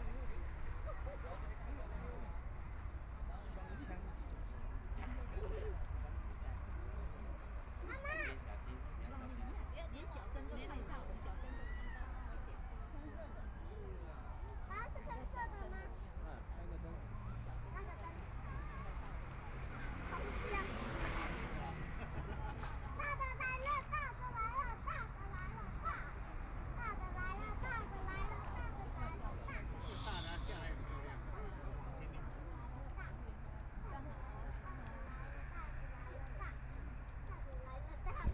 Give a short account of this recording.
A lot of people are waiting to watch planes take off and land, Aircraft flying through, Traffic Sound, Binaural recordings, ( Proposal to turn up the volume ), Zoom H4n+ Soundman OKM II